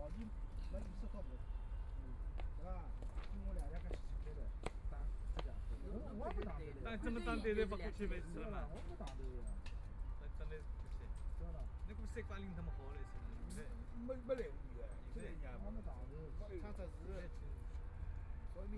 Huangxing Park, Yangpu District - Play cards
A group of older people playing cards and chatting, Binaural recording, Zoom H6+ Soundman OKM II ( SoundMap20131122- 3 )